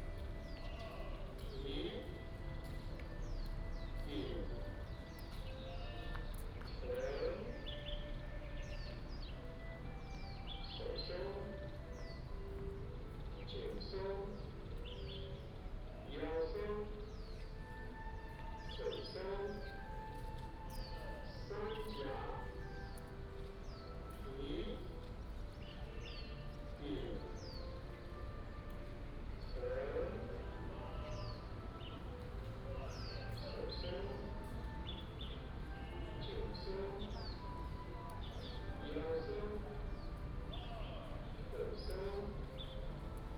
朝陽森林公園, Taoyuan Dist., Taoyuan City - in the Park
in the Park, traffic sound, birds sound, Many elderly people are doing aerobics
Taoyuan City, Taoyuan District, 民生路422巷30號, 27 July 2017